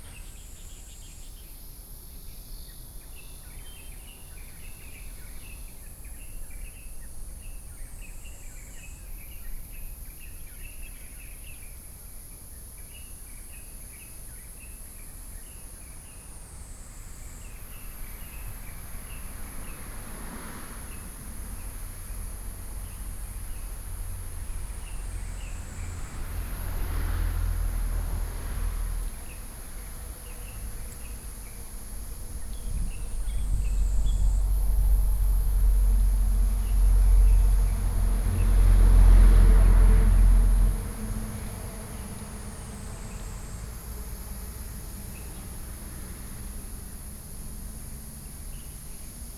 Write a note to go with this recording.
Outside the station, Birdsong, Very hot weather, Traffic Sound